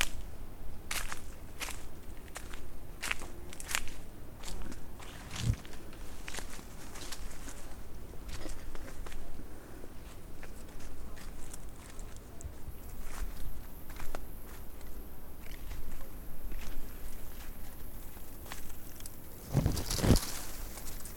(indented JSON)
{
  "title": "walk, Piramida, Maribor, Slovenia - walk",
  "date": "2012-08-24 20:34:00",
  "description": "descent walking, Piramida, twilight forest ambience",
  "latitude": "46.58",
  "longitude": "15.65",
  "altitude": "315",
  "timezone": "Europe/Ljubljana"
}